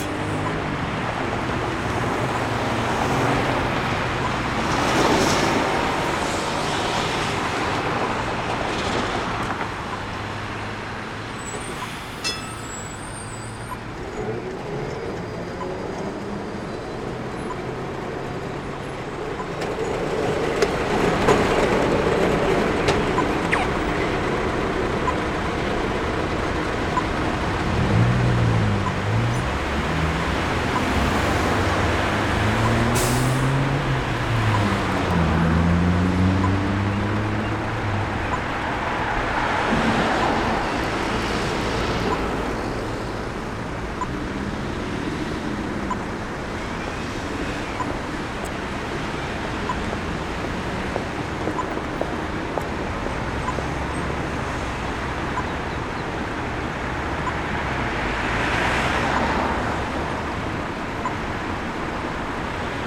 Corner of Alexandra Parade and Nicholson St - Part 4 of peculiar places exhibition by Urban Initiatives; landscape architects and urban design consultants
urban initiatives, landscape architecture, peculiar places
19 August 2010, 09:39